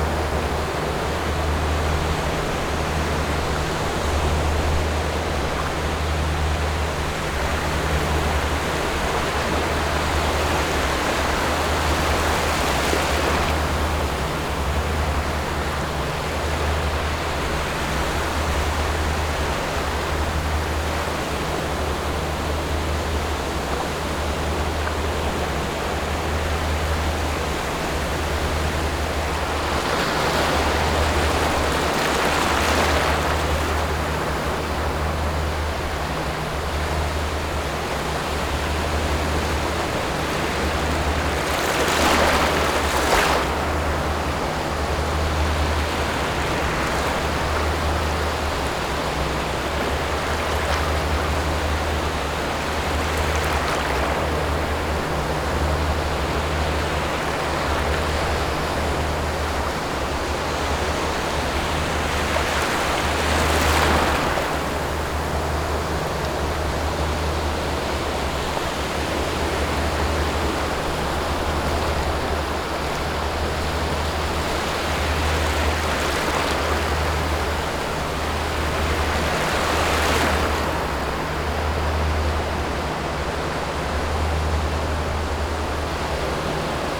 頭城鎮外澳里, Yilan County - In the beach
Hot weather, In the beach, Sound of the waves, There are boats on the distant sea
Zoom H6 MS+ Rode NT4